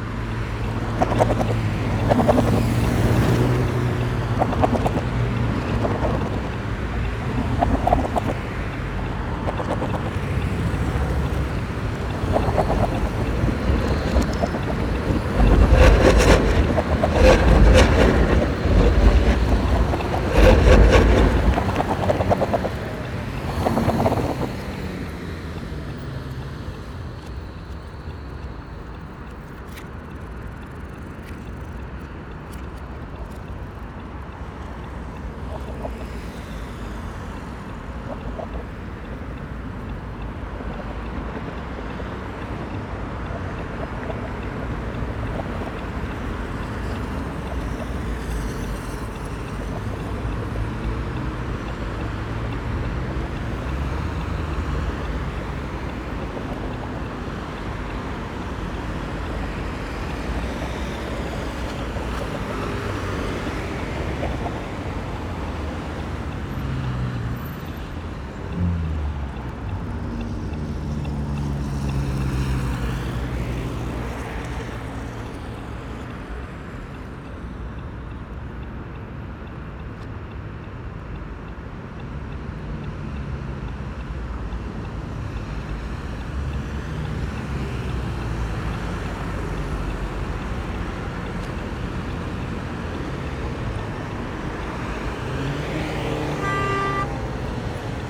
Peak traffic rhythms, BauAs Otto-Braun-Str., Berlin, Germany - Rush hour traffic rhythms, ticking lights, tyres on rails
One of the busiest corners in Berlin. Trams rumble and clatter heavily across steel rails, tyres flap rhythmically over the tram tracks, cyclists pass in droves, pedestrians wait patiently. All movements are controlled by the lights that tick, red, yellow, green, directions, speeds and timings. It looks fluid, but is very disciplined. Almost everyone does exactly as expected. Impressive social/cultural agreement found less in other cities.
9 September, 17:19